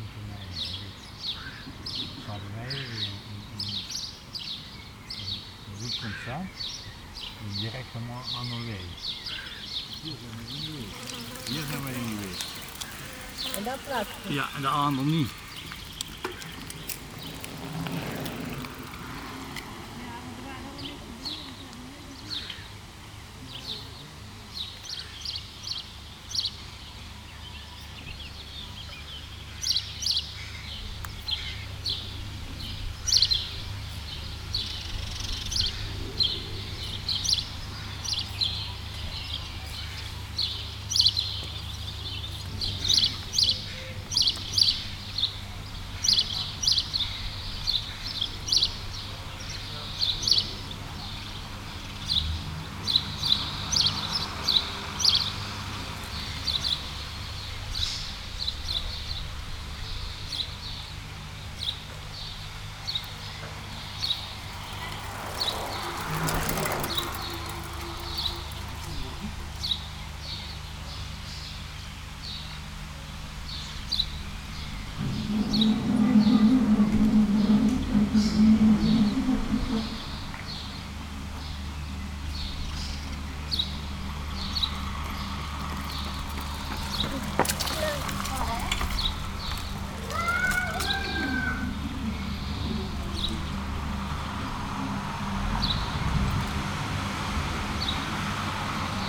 Dennevy, France - French rural landscape
What is the typical sound of a french rural landcape ? Immediatly, I think about a small Burgundy village. It's probably an Épinal print, but no matter. Beyond the stereotype, for me it's above all sparrows, Eurasian collared doves and if summer, a lot of Common Swifts shouting in the sky. Also, it's distant bells, old mobylettes and cycles bells. As countryside, it's often very nag, I let the 4 minutes of mower at the beginning. At the end of the recording, a boat called Adrienne is passing by on the Burgundy canal. The bridge is very small for the boat, thus craft is going extremely slowly.